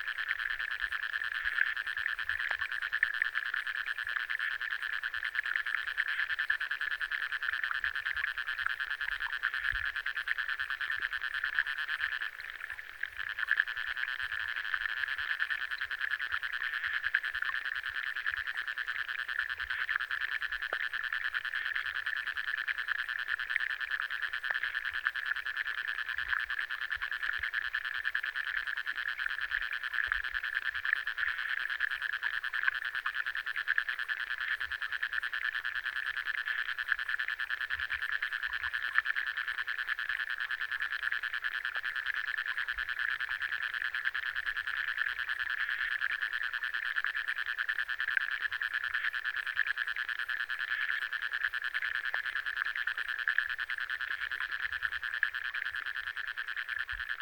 Lake Luknas, Lithuania, underwater activity
there is no footbridge from the last year visit, but there are a lot of underwater activities
Vyžuonos, Lithuania, 25 July